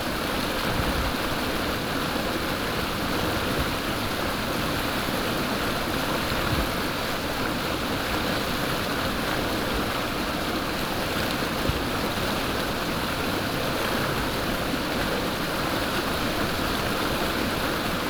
種瓜坑溪, 埔里鎮成功里, Taiwan - Brook
Brook, Standing streams
Puli Township, Nantou County, Taiwan, 19 April 2016, 14:32